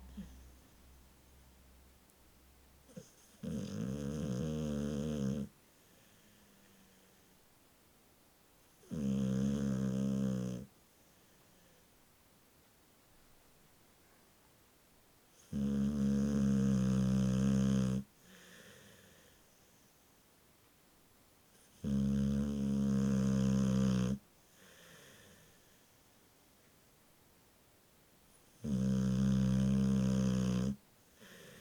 Bull mastiff asleep and snoring ... Olympus LS11 integral mics ...
Helperthorpe, Malton, UK, February 2017